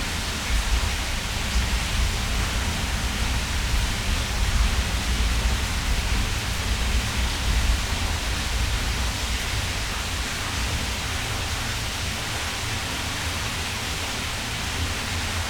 Hunte, Stau, Oldenburg - metal tube, water inflow
water flows into the river Hunte, which is rather a heavily used canal, it appears dirty. Some melodic pattern coming from the metal tube.
(Sony PCM D50, Primo EM172)